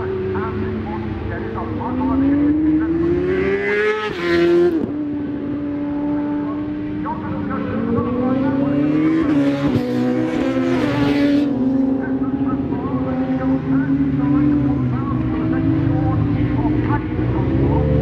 British Superbikes 2004 ... Qualifying ... part two ... Edwina's ... one point stereo to minidisk ...
Leicester, UK, 17 July 2004